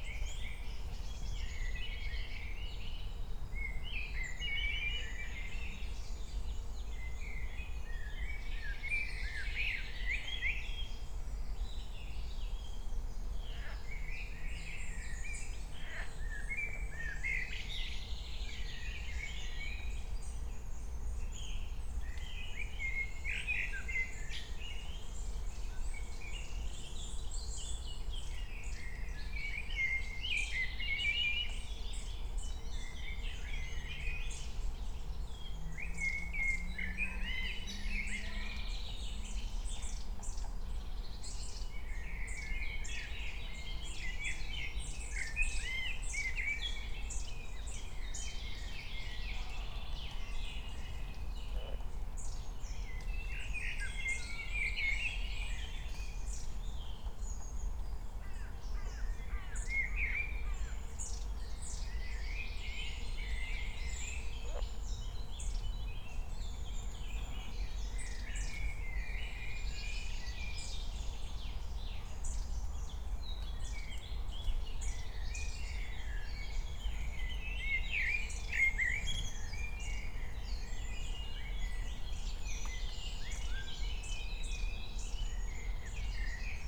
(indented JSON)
{
  "title": "Königsheide, Berlin - forest ambience at the pond",
  "date": "2020-05-23 11:00:00",
  "description": "11:00 drone, frogs, voices, fluttering wings, woodpecker",
  "latitude": "52.45",
  "longitude": "13.49",
  "altitude": "38",
  "timezone": "Europe/Berlin"
}